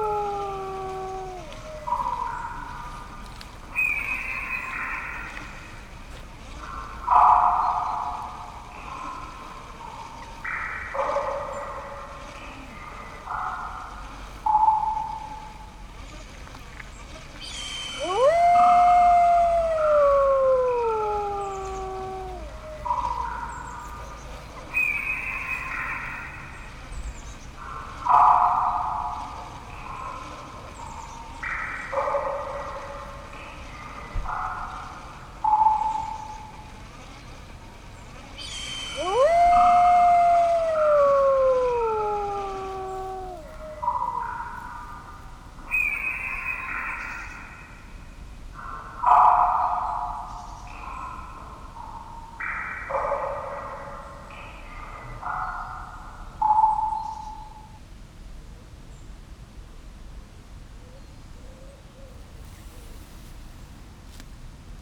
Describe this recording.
Wind to play ... please turn the handle gently ... thank you the Fairy Queen ... and thats what you get ... Alnwick Gardens ... part of the Garden of Fairy Tales feature ... open lavalier mics clipped to a sandwich box ... background noise ... some gentle winding can heard in the background ...